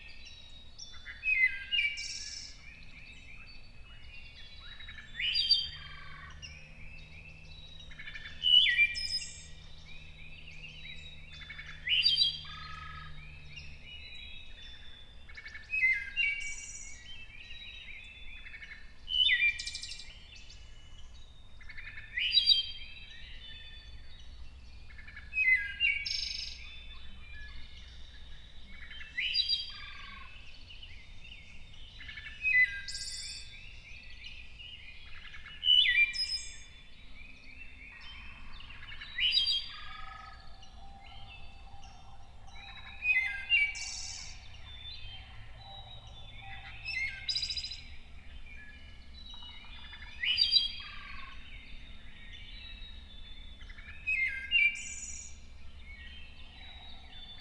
Wood Thrush Dawn Chorus May 4,2008 La Farge, Wisconsin